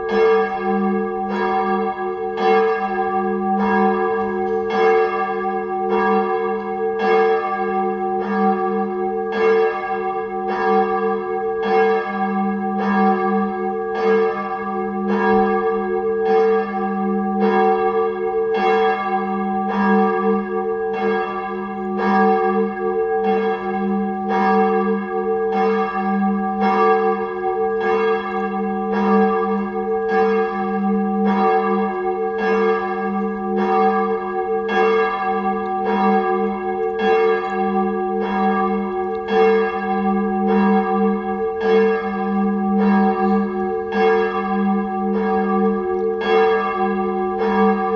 Das Glockenläuten der Genezarethkirche am Herrfurthplatz. Aufgrund der konzentrisch aufgebauten Umgebung (die kreisförmige Architektur trägt sich in letzter Konsequenz bis in die Winkel aller Hinterhöfe der umstehenden Häuser) eine gute Resonanz. Wer sich fragt, wo eigentlich der Kirchturm geblieben ist, der findet die Antwort in der unmittelbaren Nähe der Landebahn des Flughafens Tempelhof. Der Turm stand einfach ganz blöd im Weg.